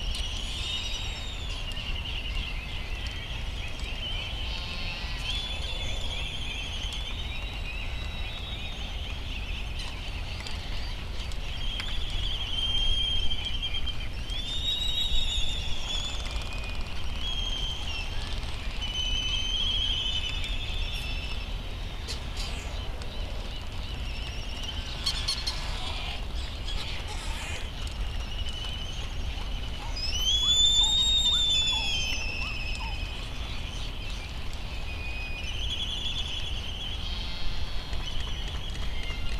Laysan albatross soundscape ... Sand Island ... Midway Atoll ... laysan albatross calls and bill clapperings ... bonin petrel and white tern calls ... open lavalier mics ... warm with slight breeze ...